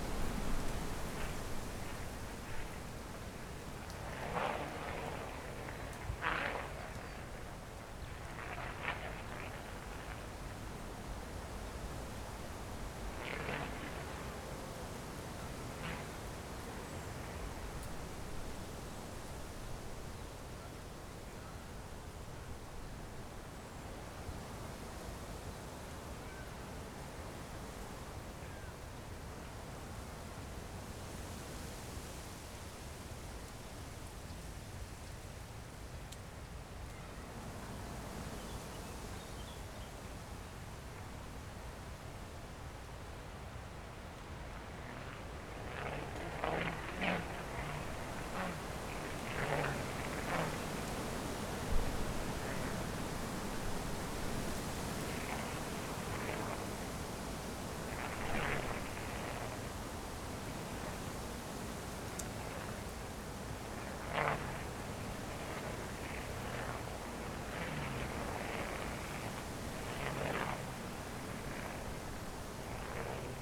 Tempelhof, Berlin, Deutschland - former shooting range, wind, kite
at a former shooting range, under a tree, wind and a kite, some birds
(SD702, S502ORTF)
Berlin, Germany, 1 May 2017, 12:40